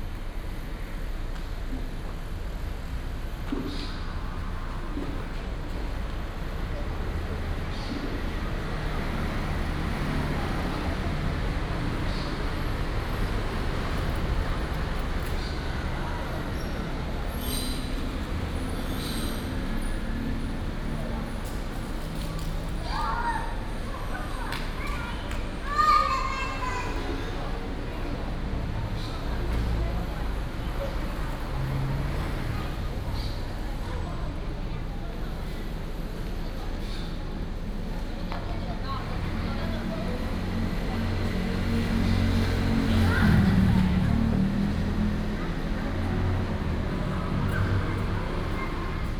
New Taipei City Art Center, Banqiao Dist. - In the hall outside the library
In the hall outside the library, Children were playing, Traffic Sound, birds sound